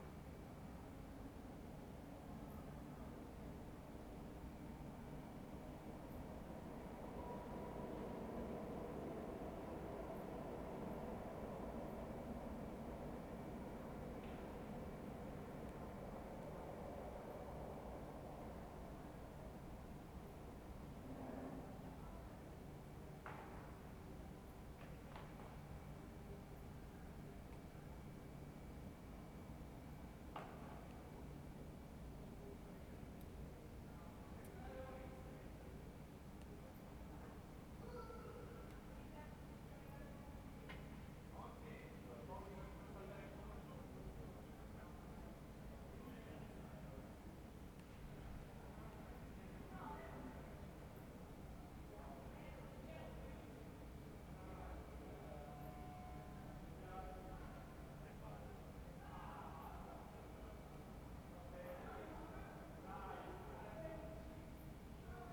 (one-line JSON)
{"title": "Ascolto il tuo cuore, città. I listen to your heart, city. Several chapters **SCROLL DOWN FOR ALL RECORDINGS** - Round midnight at spring equinox in the time of COVID19 Soundscape", "date": "2020-03-21 23:38:00", "description": "\"Round midnight at spring equinox in the time of COVID19\" Soundscape\nChapter XVII of Ascolto il tuo cuore, città, I listen to your heart, city\nSaturday March 21th - Sunday 22nd 2020. Fixed position on an internal terrace at San Salvario district Turin, eleven days after emergency disposition due to the epidemic of COVID19.\nStart at 11:38 p.m. end at OO:37 a.m. duration of recording 59'17''.", "latitude": "45.06", "longitude": "7.69", "altitude": "245", "timezone": "Europe/Rome"}